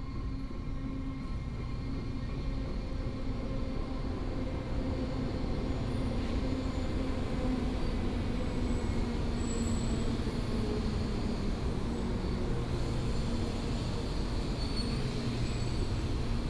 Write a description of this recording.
In the high-speed rail station platform, Trains arrive and depart